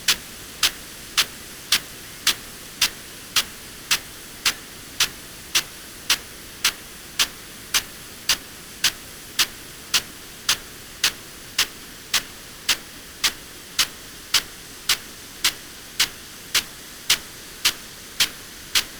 Green Ln, Malton, UK - field irrigation system ...
field irrigation system ... parabolic ... Bauer SR 140 ultra sprinkler to Bauer Rainstart E irrigation unit ... standing next to the sprinkler unit ...